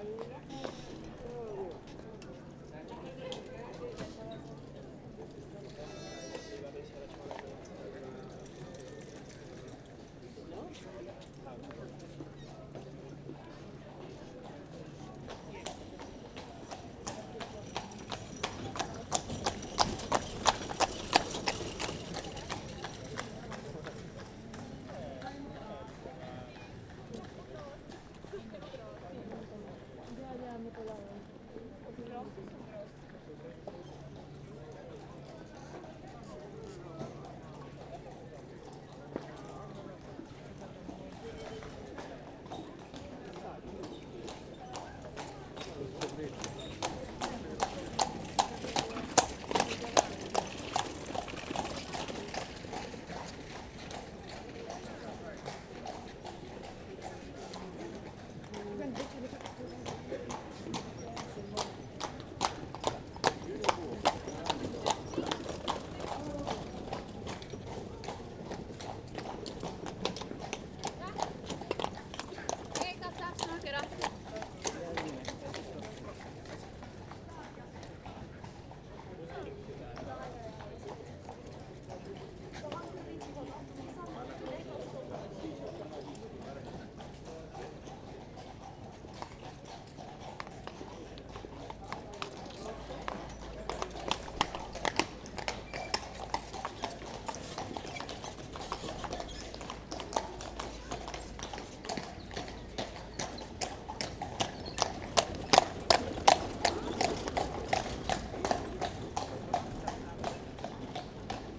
Brugge, België - Horses in the city
Wijngaardplein. Bruges can be visited by horse-drawn carriage. Horses walk tourists for a plump price. The city of Bruges is totally inseparable from the sound of hooves on the cobblestones. Streets in the city center are flooded with these journeys, a real horses ballet, immediately near the Beguinage.
Brugge, Belgium, 16 February 2019